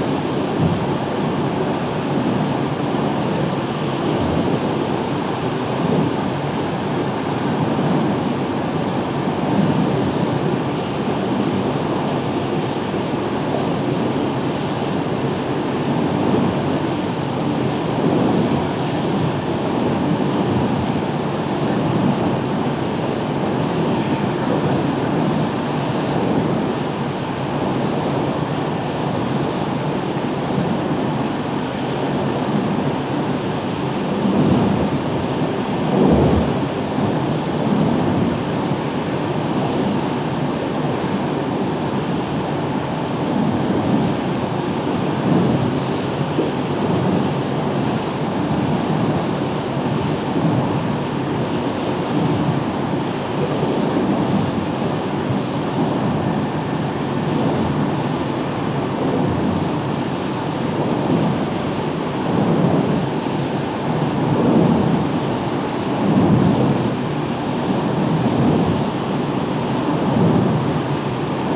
Carron Valley Reservoir Dam, Denny, UK - Waterway Ferrics Recording 003
Recorded with a pair of DPA4060s and a Sound Devices MixPre-3.
11 July 2020, ~18:00